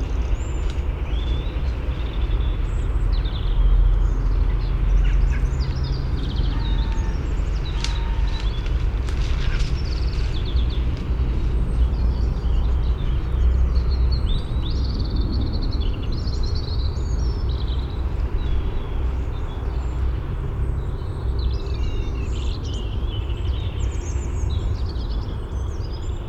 High St N, Dunstable, UK - Houghton Regis Chalk Pit ... west reedbed ...
Houghton Regis Chalk Pit soundscape ... west reedbed ... parabolic on tripod to minidisk ... bird calls from carrion crow ... jackdaw ... robin ... corn bunting ... water rail ... reed bunting ... moorhen ...snipe ... lots of traffic noise ... just a note ... although man made ... this was once the only site in southern England where water stood on chalk ... it was an SSI ... sadly no more ...